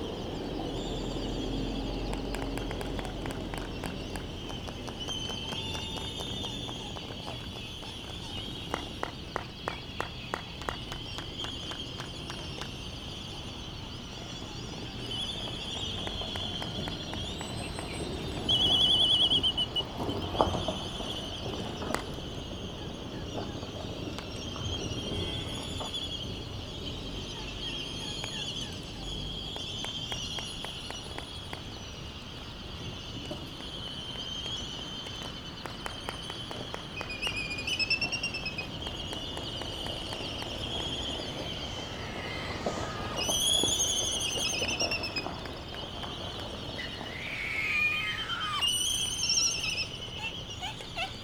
United States Minor Outlying Islands - Laysan albatross soundscape ...
Laysan albatross soundscape ... Sand Island ... Midway Atoll ... laysan albatross calls and bill clapperings ... white terns ... canaries ... open lavalier mics either side of a fur covered table tennis bat used as a baffle ... wind thru iron wood trees ... background noise ...
18 March 2012, 08:00